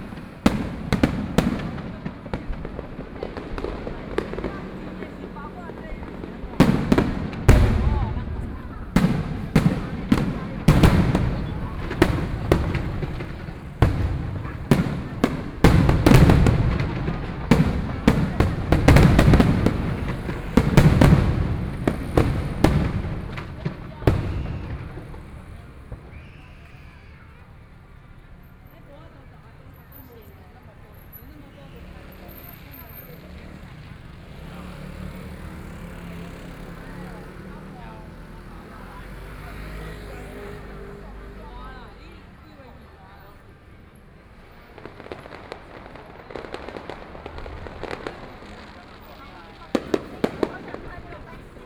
Lishan St., Neihu Dist. - the sound of fireworks
Distance came the sound of fireworks, Traffic Sound
Please turn up the volume a little. Binaural recordings, Sony PCM D100+ Soundman OKM II
Taipei City, Taiwan, 12 April 2014, 21:01